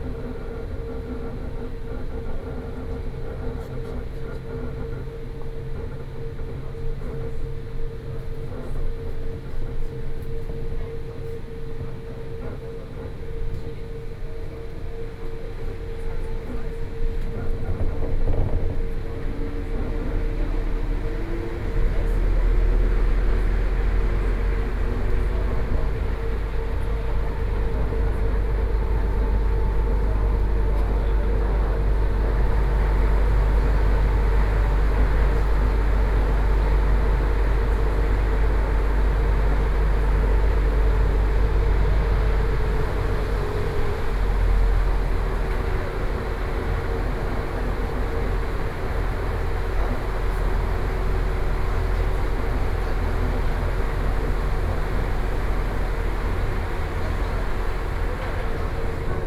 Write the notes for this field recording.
Brown Line (Taipei Metro)from Zhongxiao Fuxing Station to Songshan Airport Station, Sony PCM D50 + Soundman OKM II